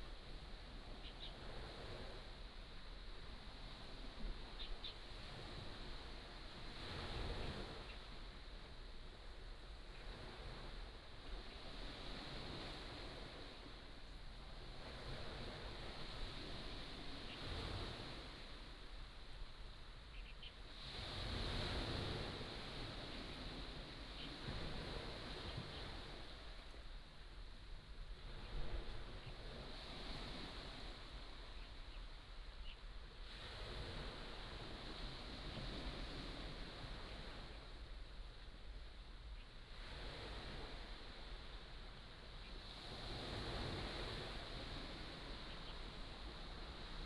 科蹄澳, Nangan Township - Sound of the waves
Birdsong, Sound of the waves